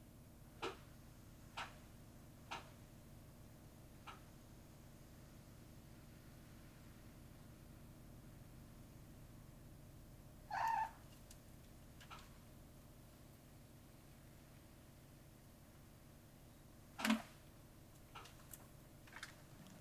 {
  "title": "Ave, Queens, NY, USA - Brief meeting between a house cat and two crows",
  "date": "2020-11-03 06:32:00",
  "description": "A brief encounter between my cat and two crows perched on the pole next to the window.",
  "latitude": "40.70",
  "longitude": "-73.90",
  "altitude": "28",
  "timezone": "America/New_York"
}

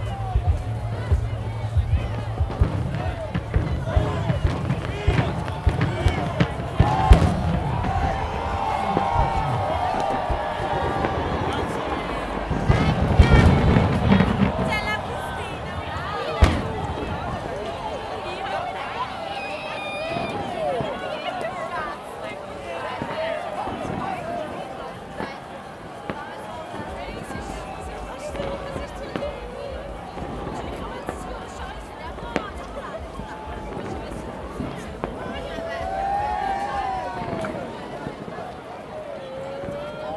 {
  "title": "Bürkliplatz, Zurich, Switzerland - New Year 2015",
  "date": "2015-01-01",
  "description": "Bassy dance music, fireworks, People counting from 3 to 1, cheers, laughter, partying (Zoom H2n, internal mics, MS-mode)",
  "latitude": "47.37",
  "longitude": "8.54",
  "altitude": "409",
  "timezone": "Europe/Zurich"
}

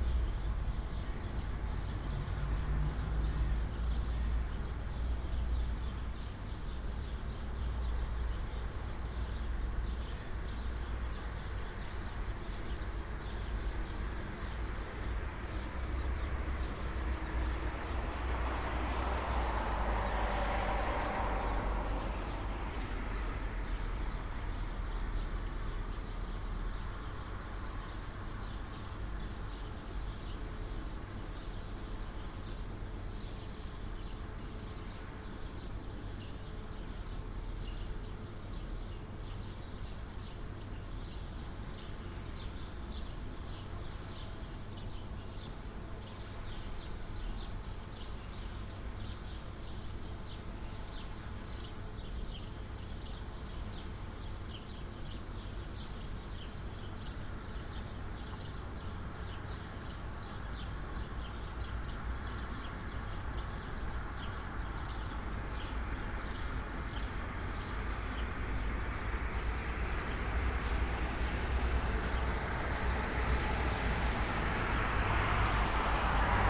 {
  "title": "dawn window, Karl Liebknecht Straße, Berlin, Germany - sunrise at 04:53",
  "date": "2013-05-28 04:52:00",
  "description": "sunrise sonicscape from open window at second floor ... for all the morning angels around at the time\nstudy of reversing time through space on the occasion of repeatable events of the alexandreplatz ambiance",
  "latitude": "52.52",
  "longitude": "13.41",
  "altitude": "47",
  "timezone": "Europe/Berlin"
}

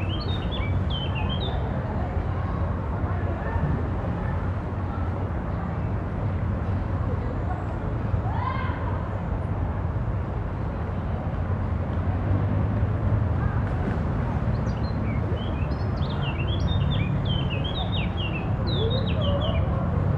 {
  "title": "Allée de Brienne, Toulouse, France - Swimming pools",
  "date": "2021-07-02 14:38:00",
  "description": "Swimming pools, Birds, Water, car trafic\ncaptation : Zoom h4n",
  "latitude": "43.61",
  "longitude": "1.42",
  "altitude": "136",
  "timezone": "Europe/Paris"
}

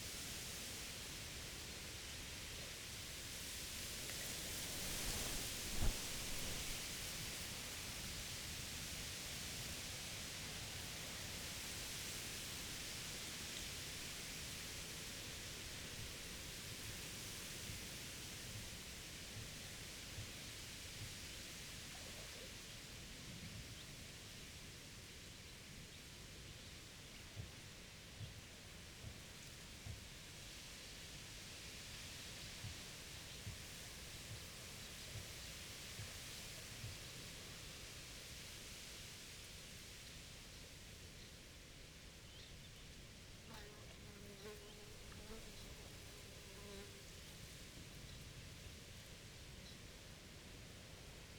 {
  "title": "workum: lieuwe klazes leane - the city, the country & me: wind-blown reed",
  "date": "2015-06-22 13:49:00",
  "description": "wind-blown reed, young coots and other birds, windturbine in the distance\nthe city, the country & me: june 22, 2015",
  "latitude": "52.96",
  "longitude": "5.42",
  "timezone": "Europe/Amsterdam"
}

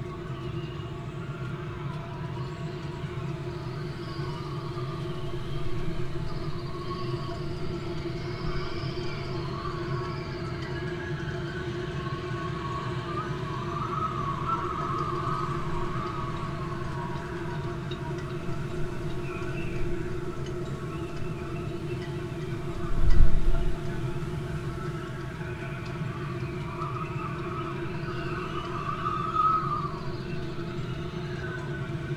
{
  "title": "workum: marina - the city, the country & me: mic in metal box trolley",
  "date": "2013-06-23 00:55:00",
  "description": "stormy night (force 5-7), mic in a metal box trolley\nthe city, the country & me: june 23, 2013",
  "latitude": "52.97",
  "longitude": "5.42",
  "altitude": "1",
  "timezone": "Europe/Amsterdam"
}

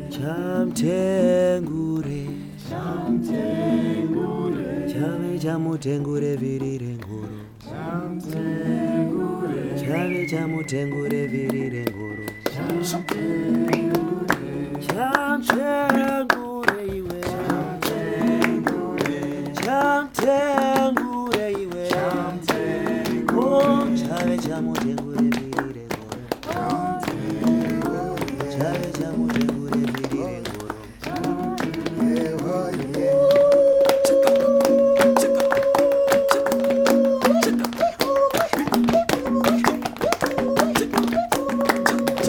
{
  "title": "Zimbabwe German Society, Milton Park, Lawson Ave - audience-impromptu-2-11-13",
  "date": "2013-11-02 13:07:00",
  "description": "What you are listening to is an impromptu sound piece produced by the audience of a workshop event at the Goethe Zentrum/ German Society in Harare. We edited it together in the open source software Audacity and uploaded the track to the All Africa Sound Map.\nThe workshop was addressing the possibilities of sharing multimedia content online and introducing a forthcoming film- and media project for women in Bulawayo.\nThe track is also archived here:",
  "latitude": "-17.81",
  "longitude": "31.03",
  "altitude": "1476",
  "timezone": "Africa/Harare"
}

